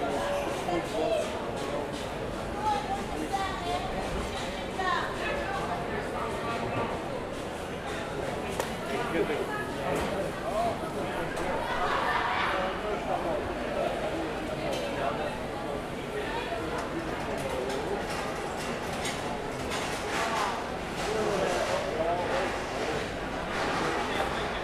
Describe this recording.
market noises and people. recorder: zoom H4n, XY internals